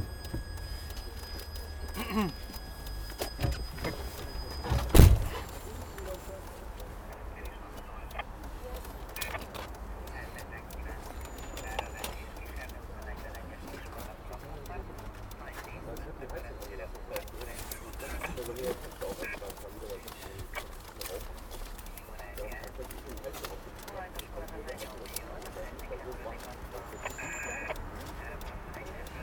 the sound of a hungarian taxi radio receiver and cash machine
international city scapes and social ambiences
Magyarország, European Union